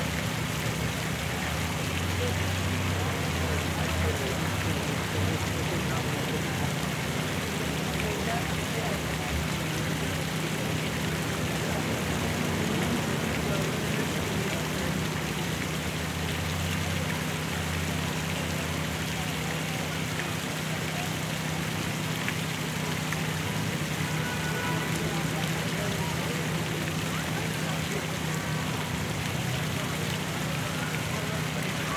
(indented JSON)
{
  "title": "W 40th St, New York, NY, USA - Josephine Shaw Lowell Memorial Fountain",
  "date": "2022-04-01 16:40:00",
  "description": "Sounds of water and kids running around the Josephine Shaw Lowell Memorial Fountain, Bryant Park.",
  "latitude": "40.75",
  "longitude": "-73.98",
  "altitude": "25",
  "timezone": "America/New_York"
}